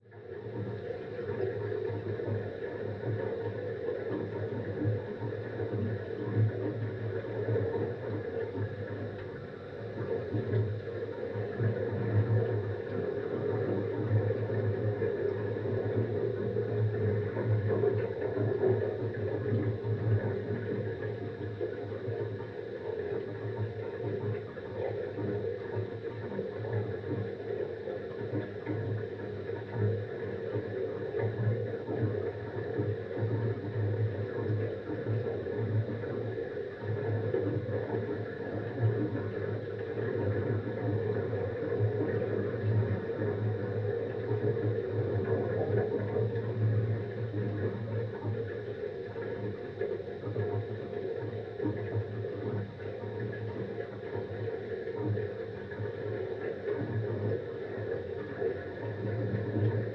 heating at work, sound of water flow within tubes
(Sony PCM D50, DIY contact mics)
Beselich, Germany, July 2017